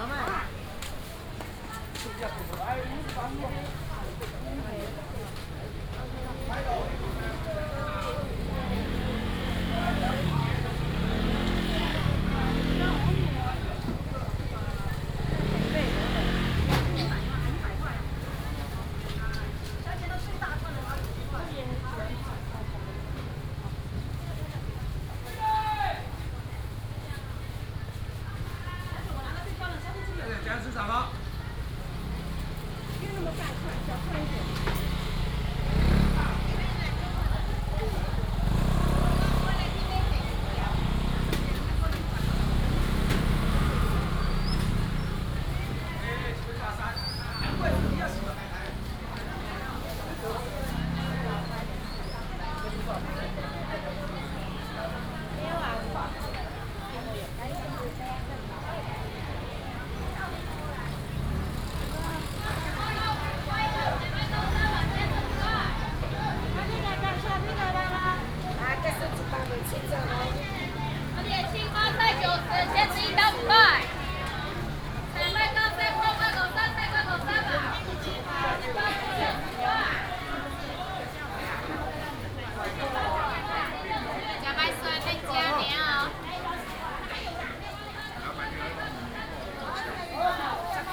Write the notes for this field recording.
Walking in the traditional market, traffic sound